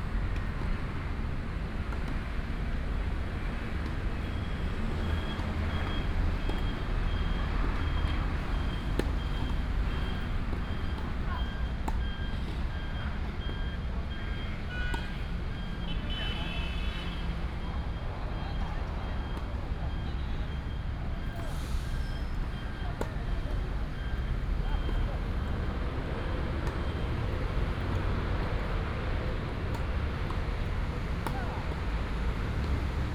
東英公園網球場, East Dist., Taichung City - Next to the tennis court
Next to the tennis court, Traffic sound, Binaural recordings, Sony PCM D100+ Soundman OKM II
Taichung City, Taiwan, 1 November 2017